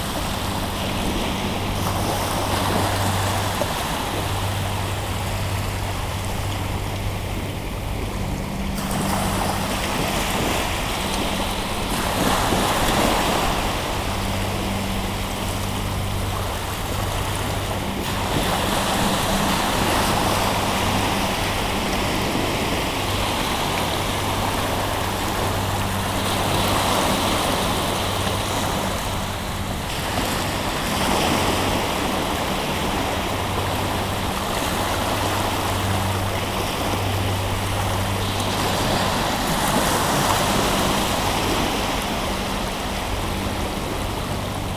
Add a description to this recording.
Quiet recording of the sea during the beginning of the low tide, in the hoopoe district of Knokke called Het Zoute.